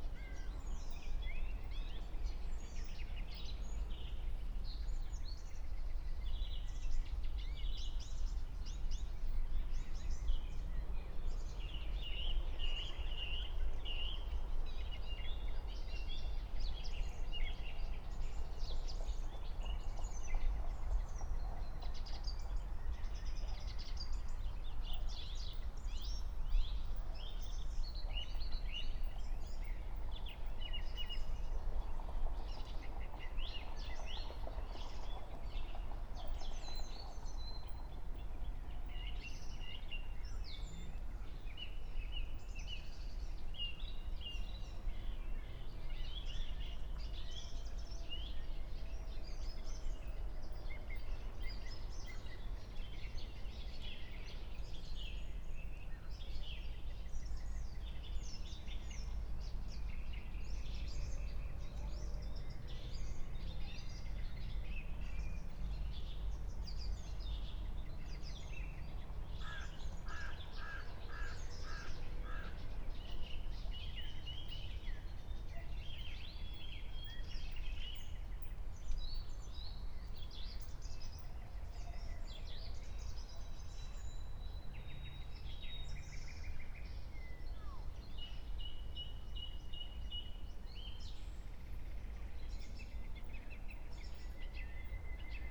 21:51 Berlin, Wuhletal - Wuhleteich, wetland
Deutschland, 15 June 2021